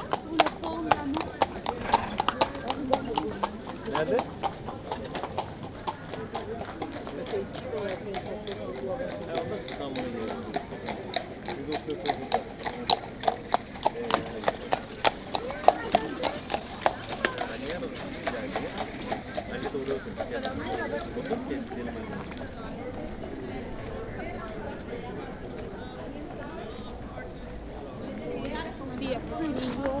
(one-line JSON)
{"title": "Brugge, België - Crowd of tourists", "date": "2019-02-16 14:00:00", "description": "An impressive crowd of tourists and the departure of the countless boats that allow you to stroll along the canals of Bruges.", "latitude": "51.21", "longitude": "3.23", "altitude": "6", "timezone": "GMT+1"}